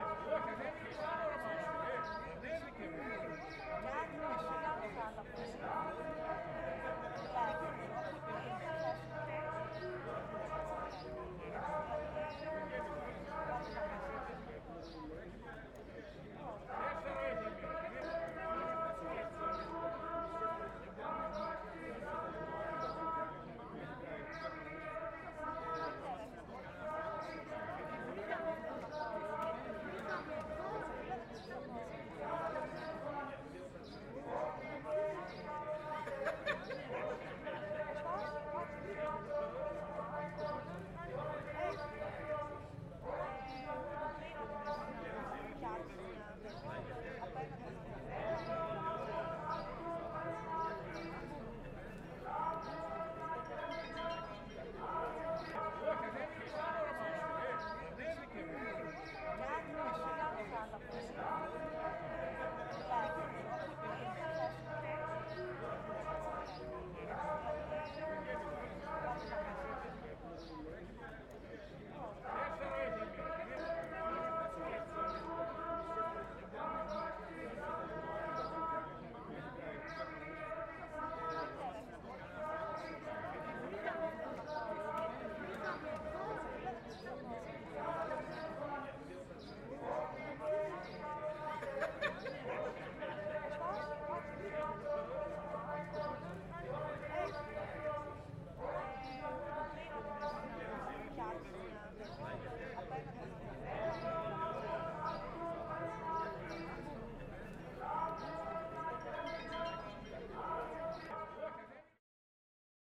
Record by : Alexandros Hadjitimotheou